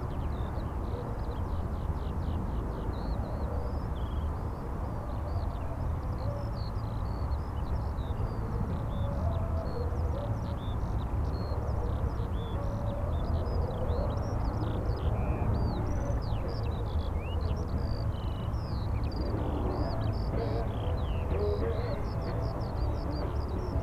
berlin, tempelhofer feld: asphaltweg - the city, the country & me: asphalted way
birds, police helicopter, in the background music of the tempelhof opening festival
the city, the country & me: may 8, 2010
8 May 2010, ~16:00